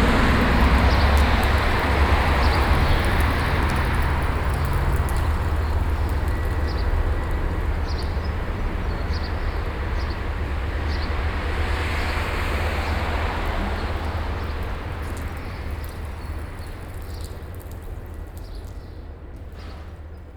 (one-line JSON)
{"title": "Sachsenhausen-Nord, Frankfurt am Main, Deutschland - Frankfurt, museum park entry, water sprinkler", "date": "2013-07-26 09:30:00", "description": "At the park entrance of the Museum in the morning time. The sound of a water sprinkler and cars passing by on the street nearby.\nsoundmap d - social ambiences and topographic field recordings", "latitude": "50.11", "longitude": "8.68", "altitude": "99", "timezone": "Europe/Berlin"}